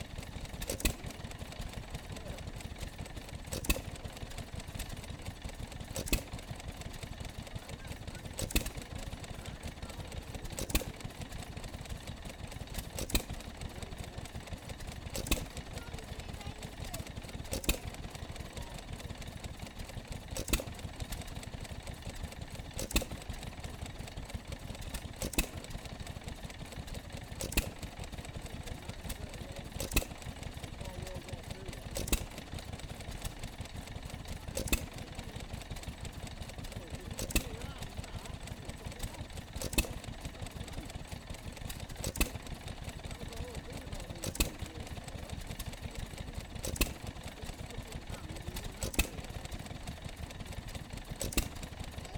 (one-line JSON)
{"title": "Welburn, York, UK - john deere model e 1938 ...", "date": "2022-07-26 12:35:00", "description": "john deere model e 1938 stationary engine ... petrol ... 1 and half hp ... used for water pump ... corn shellers ... milking machine ... washing machine ... sheep shearing ...", "latitude": "54.26", "longitude": "-0.96", "altitude": "47", "timezone": "Europe/London"}